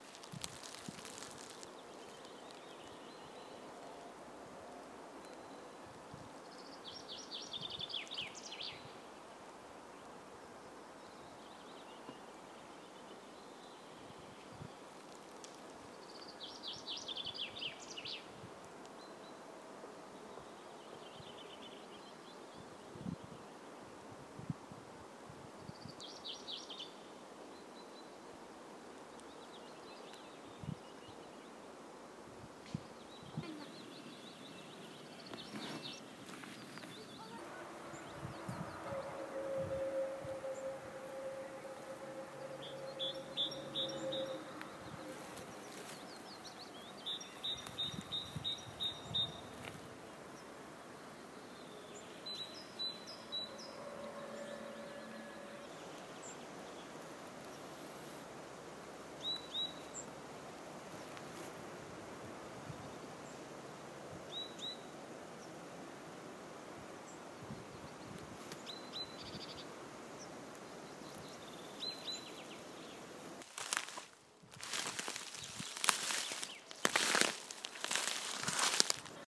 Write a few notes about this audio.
field recording in the forest behind the Hiukkavaara school, in collaboration with Hiukkavaaran koulu